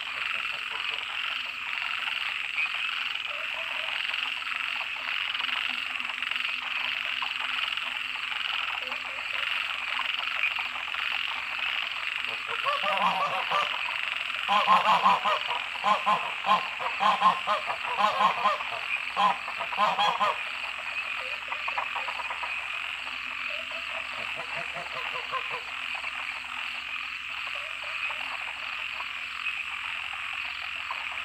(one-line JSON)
{"title": "Shuishang Ln., Puli Township, Nantou County - Frogs chirping and Goose calls", "date": "2016-04-19 20:11:00", "description": "Frogs chirping, Goose calls, Dogs barking\nZoom H2n MS+XY", "latitude": "23.93", "longitude": "120.89", "altitude": "769", "timezone": "Asia/Taipei"}